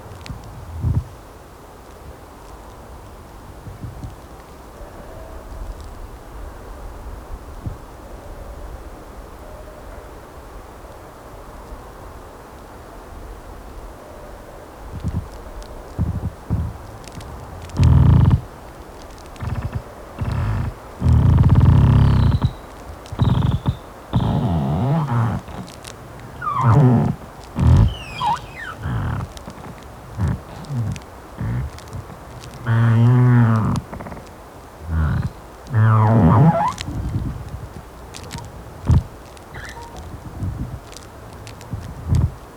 Lithuania, Utena, pine-tree speaks
pine-tree speaks and moans in a small wind
7 February, 13:30